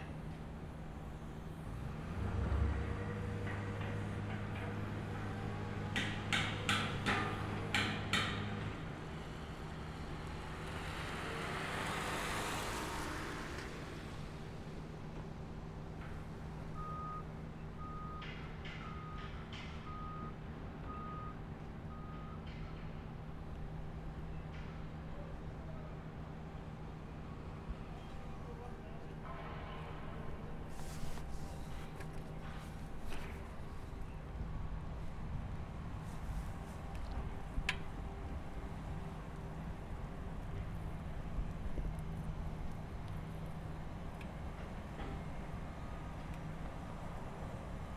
Papierweg, Amsterdam, Nederland - Wasted Sound ICL
Wasted Sound
‘‘With the wasted sounds it is discussable if the sound is useful or not. It might in most cases not be useful. But what we have to consider is that the sound is often a side product of a very useful thing, which proves again that you have to have waste to be productive.’’
Noord-Holland, Nederland, 6 November 2019